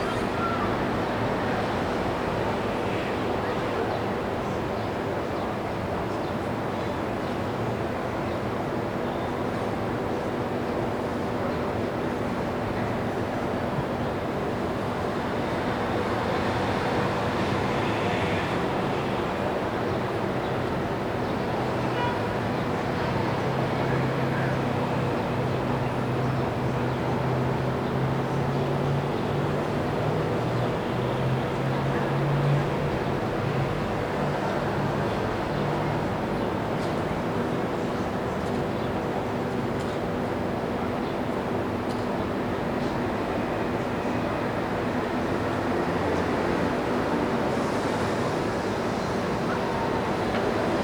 Balcony, Room, Holiday Inn Imperiale, Via Paolo e Francesca, Rimini RN, Italy - Morning sounds of Via Pabolo e Francesca
Helicopter buzzing the beach, lots of mopeds and people sounds.